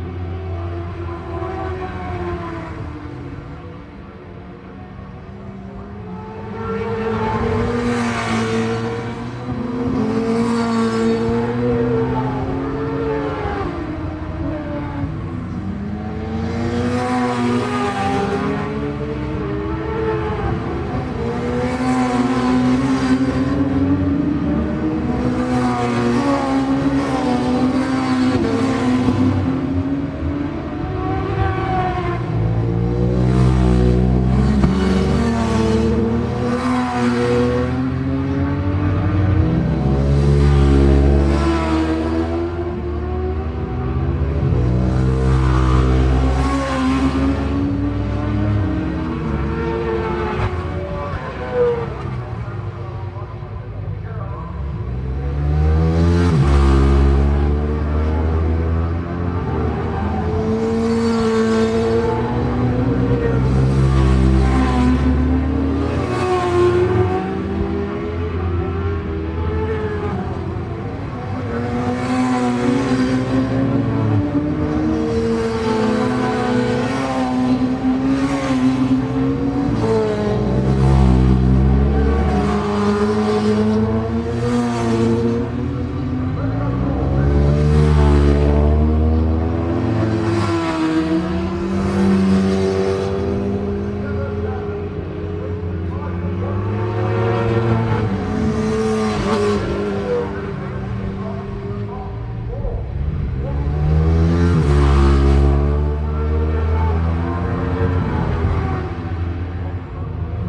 Leicester, UK - british superbikes 2002 ... superbikes ...
british superbikes 2002 ... superbikes qualifying ... mallory park ... one point stereo mic to minidisk ... date correct ... no idea if this was am or pm ..?
14 September 2002, 10:00